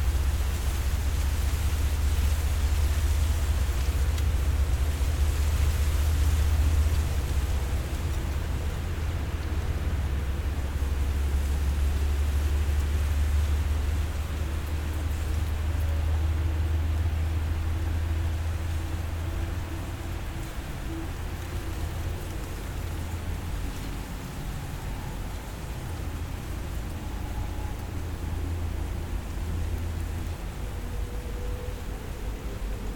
Constitution Marsh Audubon Center and Sanctuary.
Sound of reeds, water, and the Metro-North train.
Zoom h6
19 September 2020, 17:30